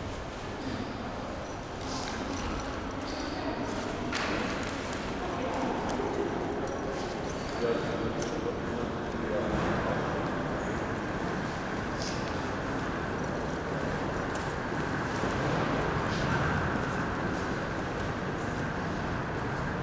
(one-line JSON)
{"title": "HBF Weimar, Deutschland - station hall ambience", "date": "2016-01-27 18:10:00", "description": "Weimat main station hall ambience\n(Sony PCM D50)", "latitude": "50.99", "longitude": "11.33", "altitude": "237", "timezone": "Europe/Berlin"}